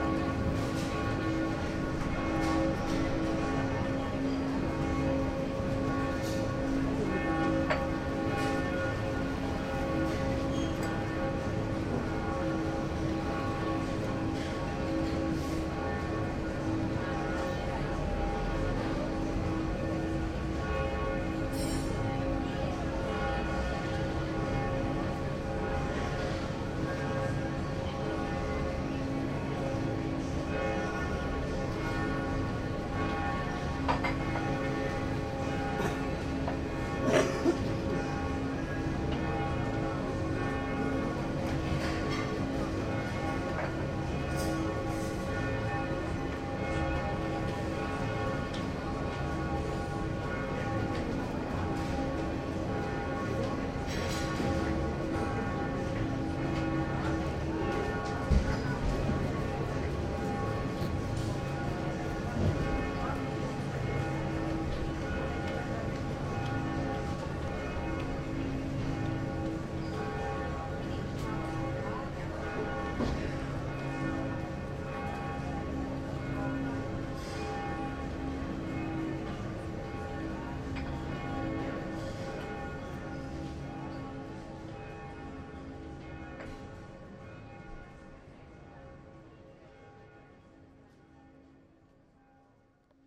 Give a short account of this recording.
recorded at the market with church bells, in the framework of the EBU sound workshop